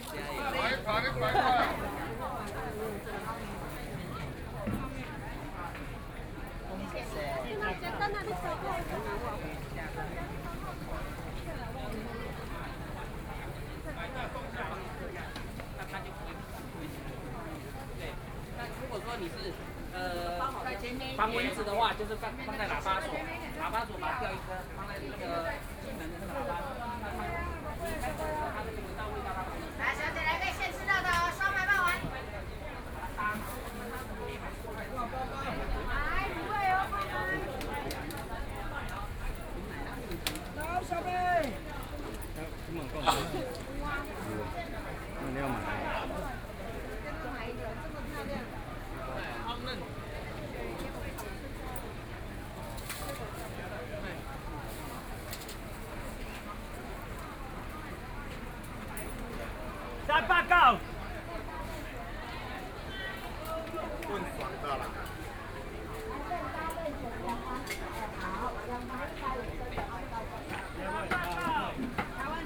中山區集英里, Taipei City - Traditional Market
walking in the Traditional Market
Sony PCM D50+ Soundman OKM II
Zhongshan District, Taipei City, Taiwan, 27 April 2014